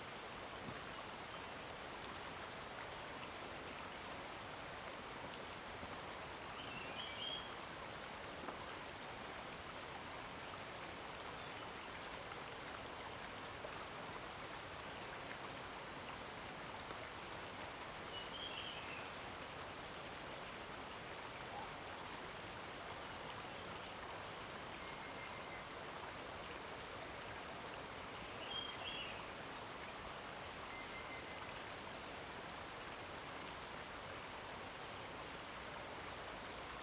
種瓜路桃米里, Puli Township - Streams and birds sound
Streams and birds sound, Faced farmland
Zoom H2n MS+XY
Puli Township, Nantou County, Taiwan, 28 April